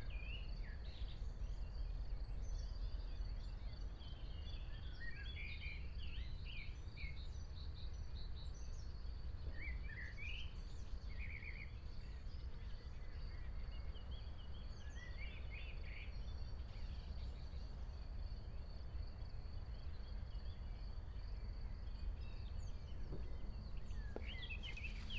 Piramida, Maribor - solstice meadow
early morning annual summer solstice variation of ”aleatory leaf novel”
Podravska, Vzhodna Slovenija, Slovenija, 21 June, 05:08